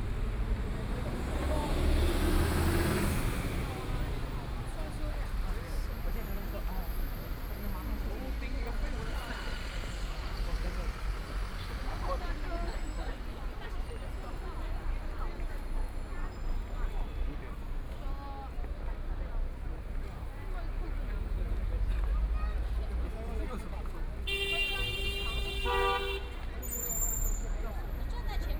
Fuzhou Road, Shanghai - soundwalk
Walking in the street, Traffic Sound, Street, with moving pedestrians, Binaural recording, Zoom H6+ Soundman OKM II